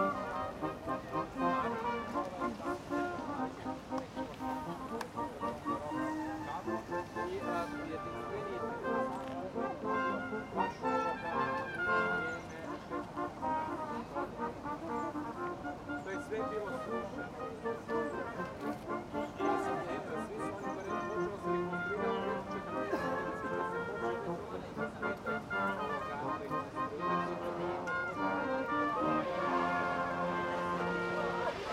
Dresden, Germany - Baroque
Music in front of Zwinger